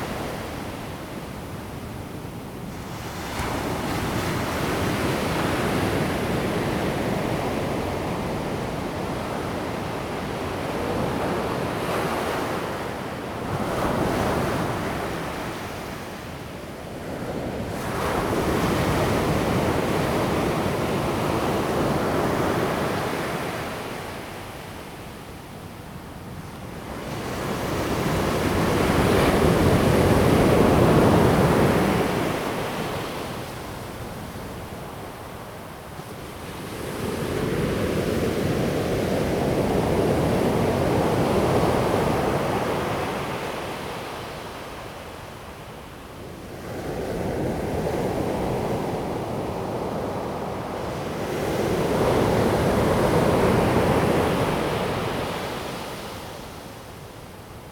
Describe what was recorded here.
At the beach, Sound of the waves, Near the waves, Zoom H2n MS+XY